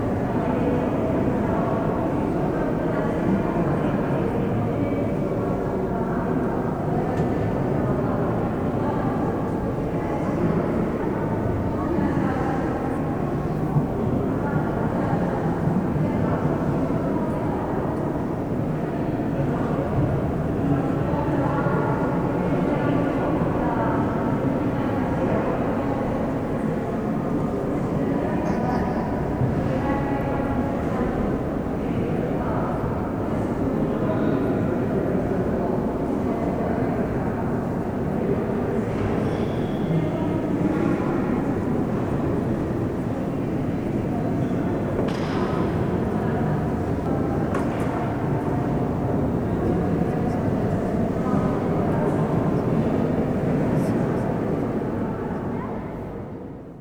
tondatei.de: palma de mallorca, la seu, atmo, kirche - tondatei.de: aplma de mallorca, la seu, atmo, kirche
kirche kathedrale hall
Palma, Spain